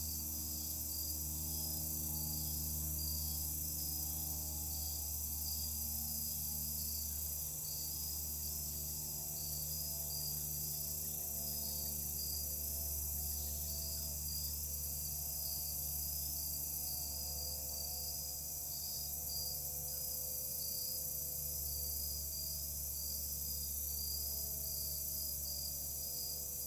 Stinging Nettle Trail, Ballwin, Missouri, USA - Stinging Nettle End
Out looking for pawpaws and made this recording from a bench at the end of the Stinging Nettle Trail before trail is washed out by the Meramec River to the east.